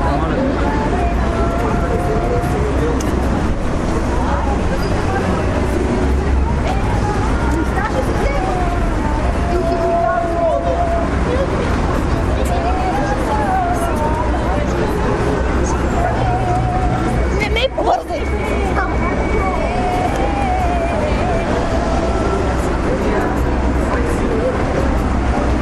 Sofia, street noise and musicians II - street noise and musicians II
Sofia, Bulgaria, 5 October 2012, ~11:00